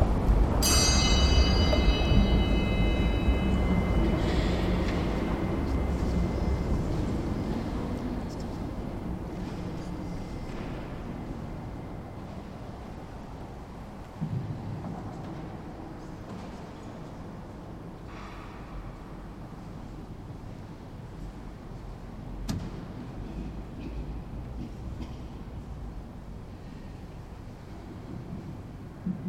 Prague, Czech Republic - Church of st. Antonin at Strossmayer square
Early evening at the Square of Strossmayer, in front and inside of the church of St Antonín Paduánský, The church was founded in the beginning of 20th century. First official name in 1908 was Bubenské Square, however was called In front of the church.